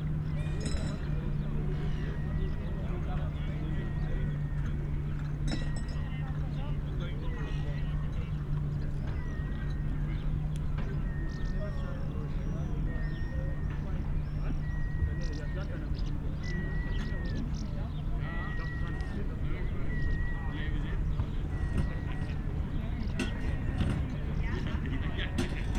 urk: staverse kade - the city, the country & me: beach opposite industrial harbour
evening ambience
the city, the country & me: june 11, 2013
Urk, The Netherlands, 11 June 2013